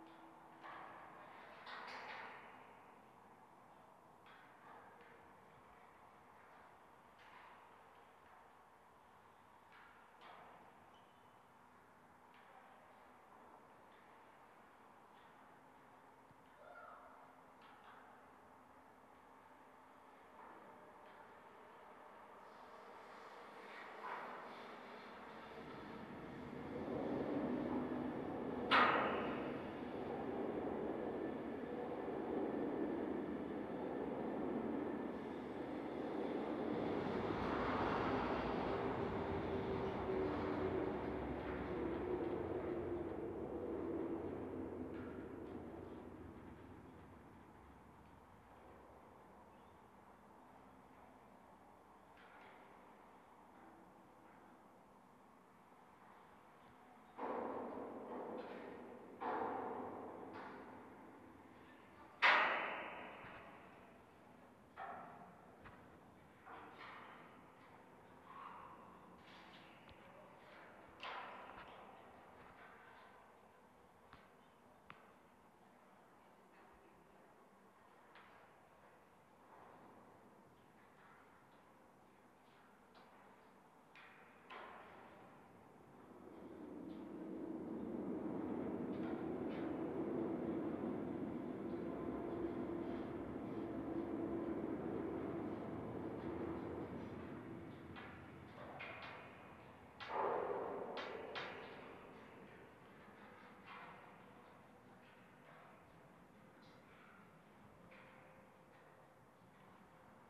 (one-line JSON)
{"title": "City of Brussels, Belgium - Listening to trains through a metal fence and contact microphones", "date": "2013-03-27 15:55:00", "description": "I attached two contact microphones (both made by Jez Riley French) onto the metal fence at the back of the skateboarding park, in order to listen to the vibrations of trains passing. The metal fence collects many other environmental sounds, so that as you stand and listen to the contact microphones you hear not only the trains but also the atmosphere of the skateboarding park.", "latitude": "50.84", "longitude": "4.35", "altitude": "30", "timezone": "Europe/Brussels"}